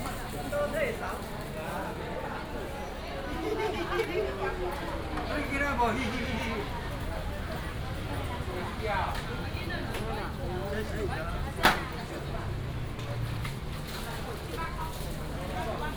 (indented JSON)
{
  "title": "華勛市場, Zhongli Dist., Taoyuan City - Traditional market",
  "date": "2017-11-29 08:30:00",
  "description": "Traditional market, Traffic sound, Binaural recordings, Sony PCM D100+ Soundman OKM II",
  "latitude": "24.95",
  "longitude": "121.26",
  "altitude": "152",
  "timezone": "Asia/Taipei"
}